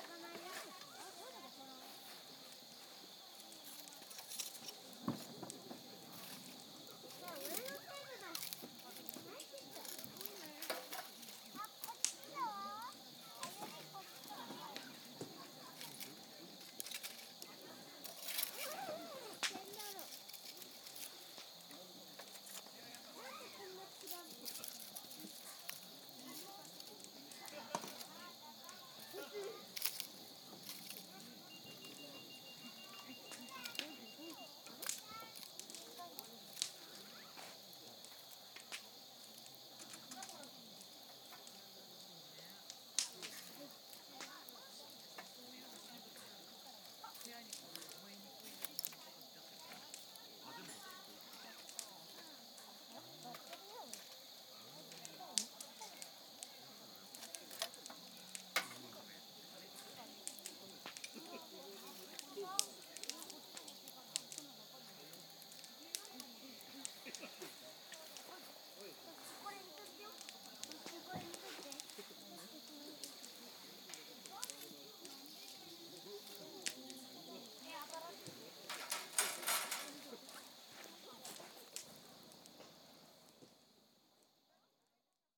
Kadogawa, Miyazaki, Japan - Before Bedtime at a Campsite in Miyazaki
I don't remember the name of this campsite, but I made this recording while waiting for the embers of our fire to die down and kids to calm down and go to bed.
宮崎県, 日本